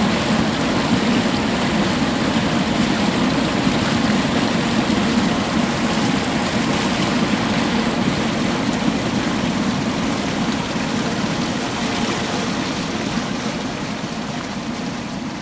Výškov, Česká republika - pipes
sounds of the stream pipes from the sedimentation lake of the power station Počerady
Výškov, Czech Republic, 18 October 2016